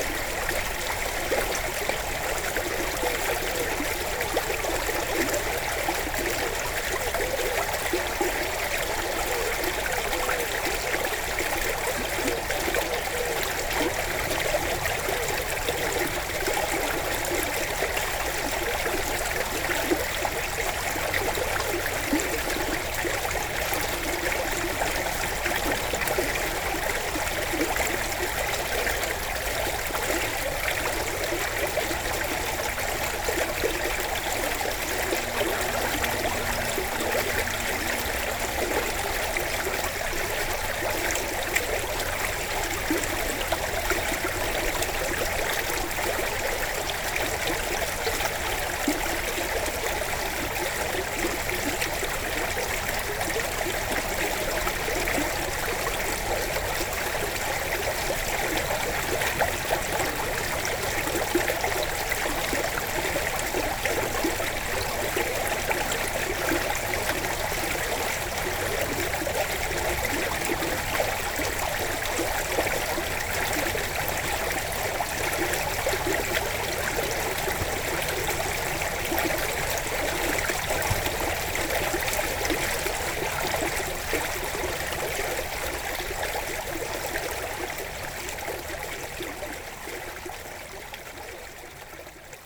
Chastre, Belgique - Houssière river
The small Houssière river, in a bucolic landscape. During all recording, a cat is looking to this strange scene, and is rolling on the ground :)
Chastre, Belgium, 14 August, 20:30